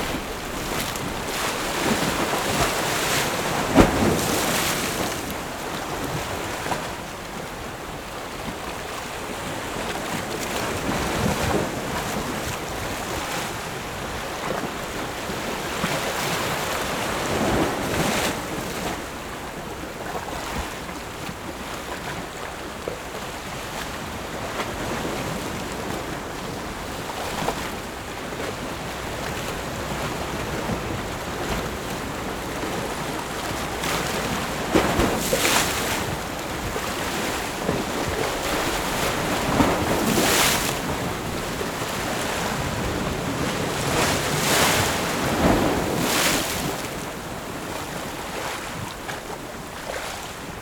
石門區尖鹿村, New Taipei City - The sound of the waves